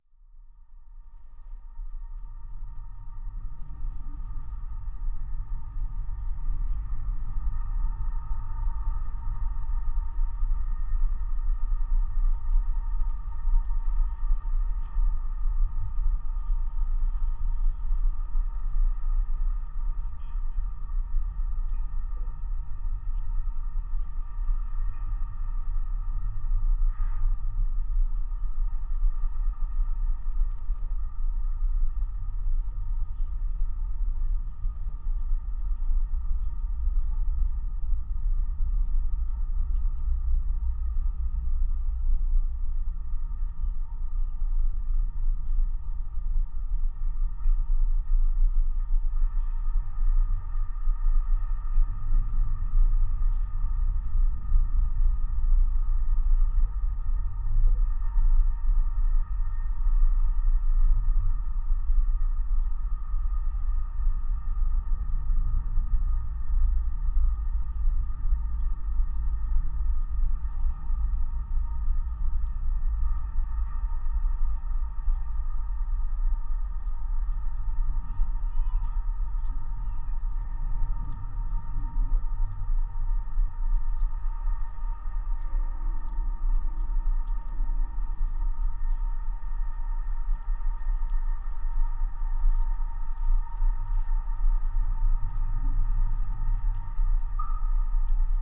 Klaipėda, Lithuania, support wire near millitary building
contact microphones on wire supporting some antenna tower standing in restricted military area
22 October, 12:05